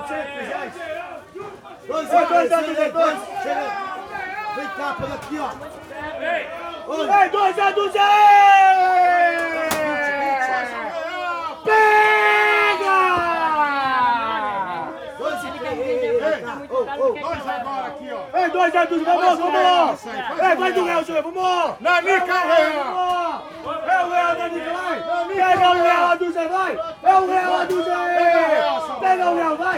Street Market Perus (Sao Paulo) - Banana seller in a Brazilian market
In a peripheral area of Sao Paulo (Perus), Alessandro is one of the sellers from the "Tigueis Banana" stand. At the end of the market, the prices are low and he has to scream to sell all the banana before the end of the street market.
Recorded by an ORTF setup Schoeps CCM4
on a Cinela ORTF suspension and a DIY Windscreen
GPS: -23.407617, -46.757858
Sound Ref: BR-220603-03
Recorded on 3rd of June 2022 at 3pm
São Paulo, Região Sudeste, Brasil, 3 June 2022, 15:00